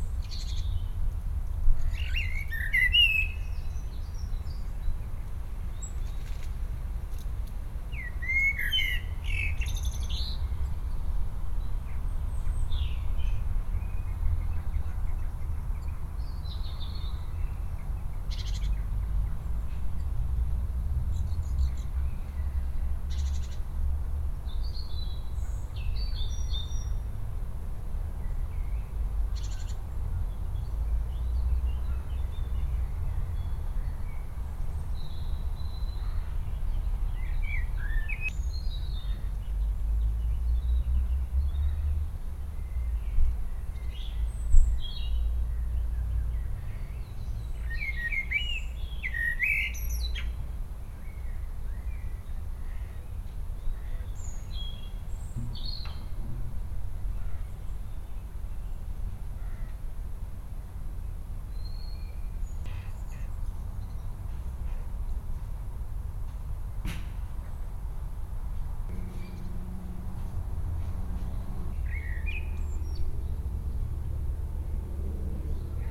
Kerkhoflaan, Den Haag, Nederland - Birds of the Sint Petrus Cementery The Hague
Recording of the singing birds at the Sint Petrus Cementery in The Hague. Equipment used: Tascam DR100-MKlll